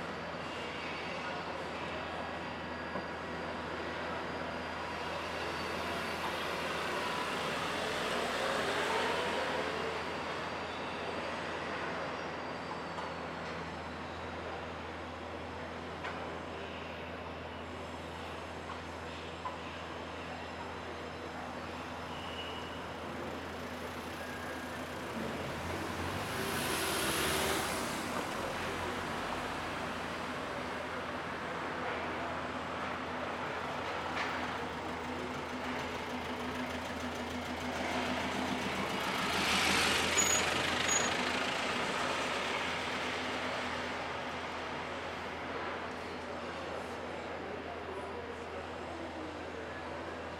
L'Aquila, cantoni - 2017-05-29 07-4 Cantoni